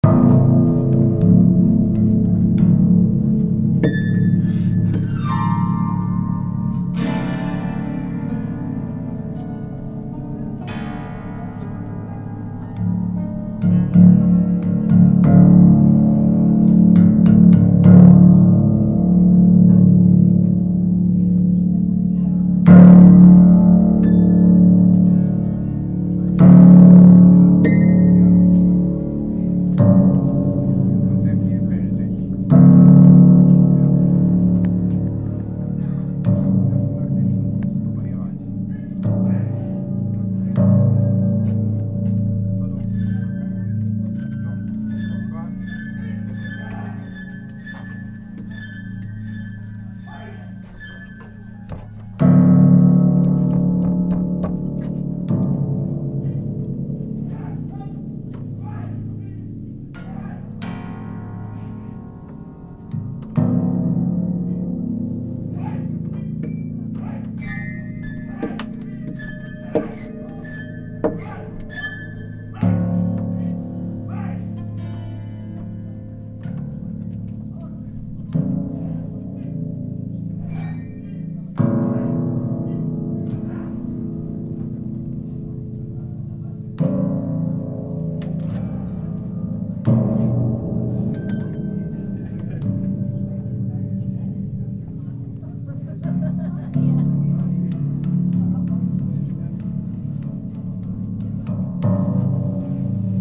cologne, eifelwall, pianostrings and fighting calls
piano strings played with sticks in an open living space constructed by rolf tepel. nearby a martial arts group rehearsing movements
soundmap nrw - social ambiences and topographic field recordings